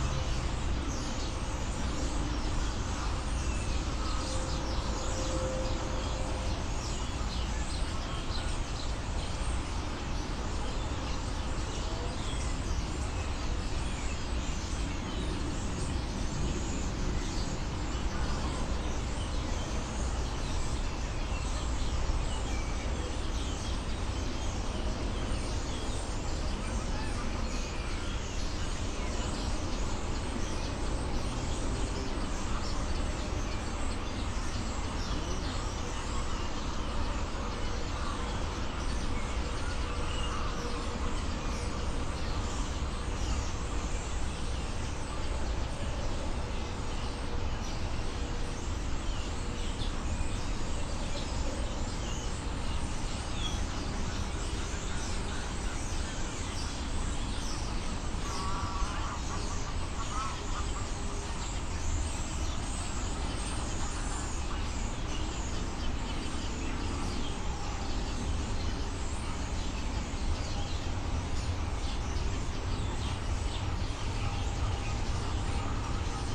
Moorlinse, Berlin-Buch, Deutschland - starlings (Sturnus vulgaris), remote traffic
many starlings (Sturnus vulgaris) chatting in the dense reed at Moorlinse pond, Berlin Buch. Intense drone from the nearby Autobahn ring
(Sony PCM D50, Primo EM272)